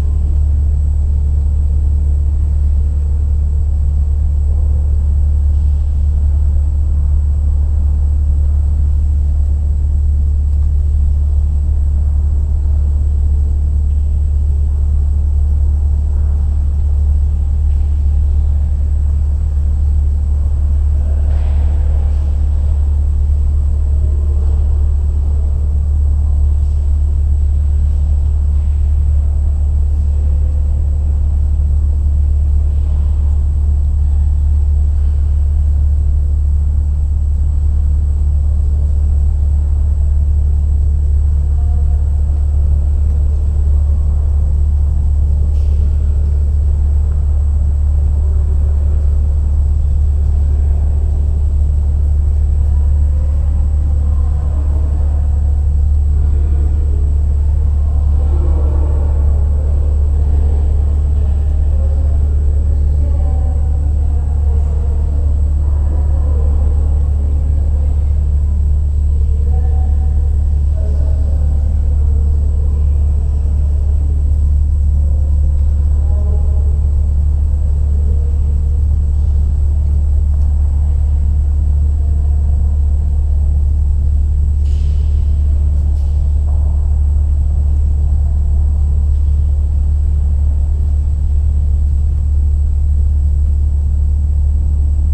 Air conditionning system in a museum room, drone in art..!
City of Brussels, Belgium, 20 November